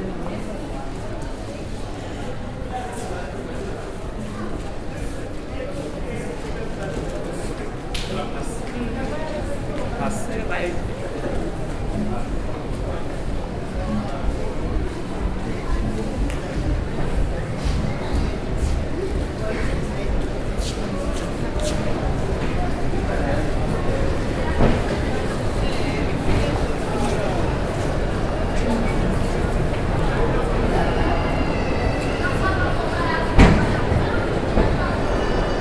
coming from Gautrain Station walking over into Park Station...